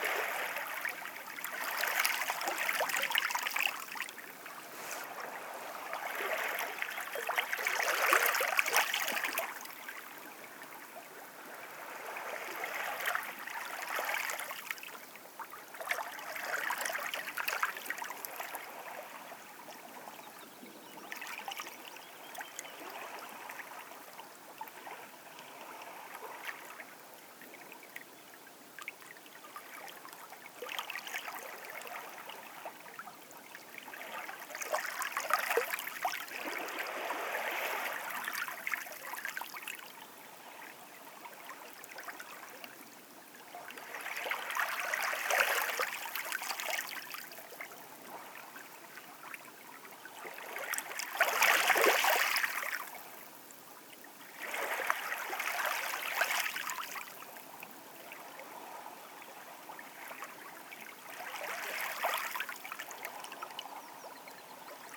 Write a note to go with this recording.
The lapping of the waves. Легкий плеск морских волн.